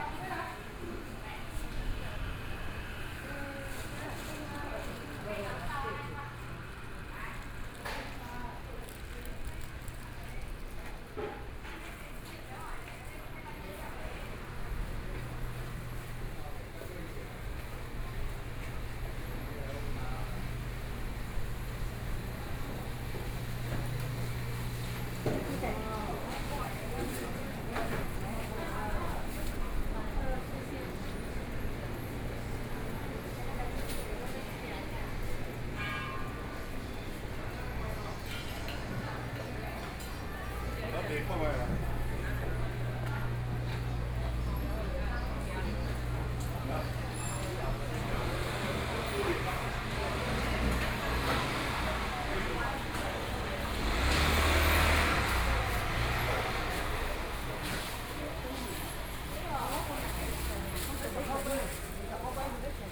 三民區港東里, Kaohsiung City - Traditional Market
Walking through the traditional market